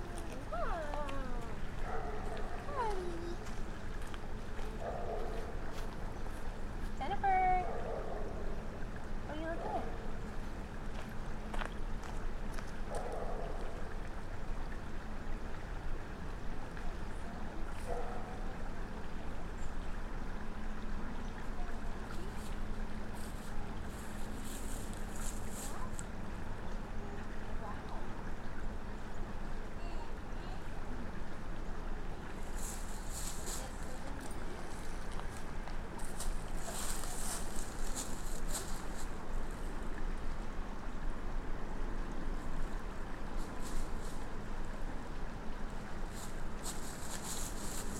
Wetlands area and elevated boardwalk, Heritage Park Trail, Smyrna, GA, USA - Next to the creek
A recording from a small observation platform overlooking Nickajack Creek. The mics were tied around a wooden support facing towards the creek. You can hear the faint sound of water as people walk the trail. A child moves in close to the recording rig, but thankfully nothing is disturbed.
[Tascam DR-100mkiii w/ Primo EM-272 omni mics]